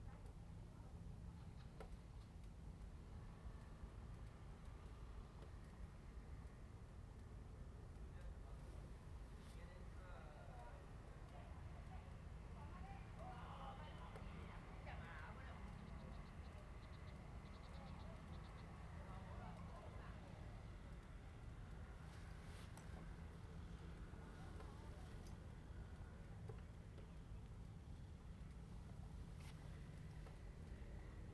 白坑村, Huxi Township - Small fishing port
Small fishing port, Aircraft flying through
Zoom H2n MS+XY